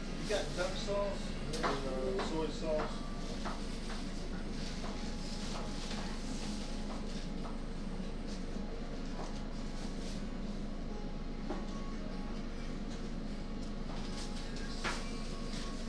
Wayne, Indianapolis, IN, USA - Chinese Restaurant
Binaural recording inside a Chinese restaurant.
16 January